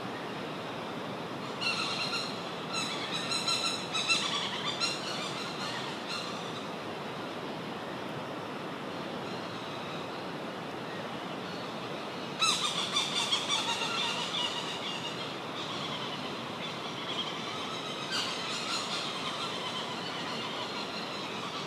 Little Island, Lord Howe Island - Providence Petrels
Mating season for the Providence Petrels on Mount Gower and Mount Lidgbird